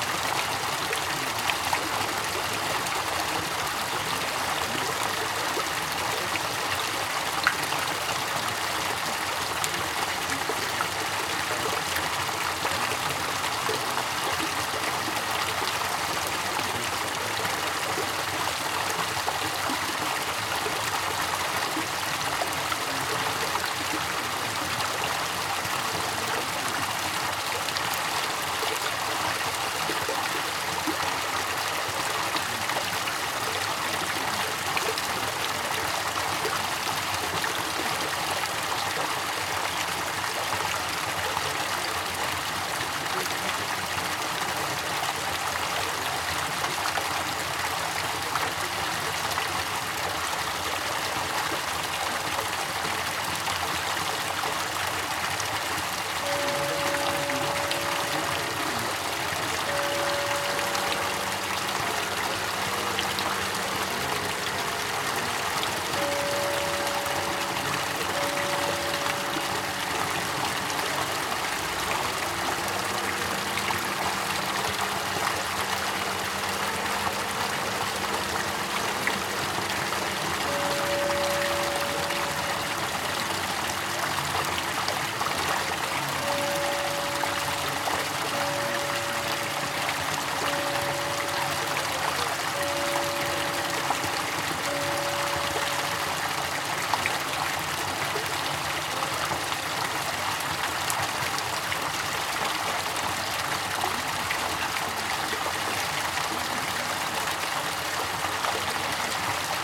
Focus on water, bells at 5, people talking nearby.
Tech Note : Sony PCM-D100 internal microphones, wide position.